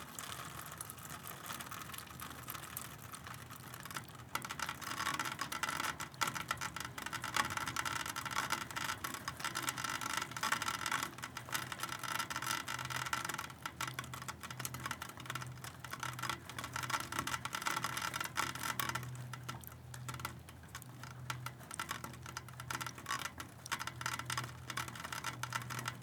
Waters Edge - Melting Snow in Downspout
This is the sound of the snow melting from the roof and coming down the the downspout on a warm March day.
15 March 2022, 16:35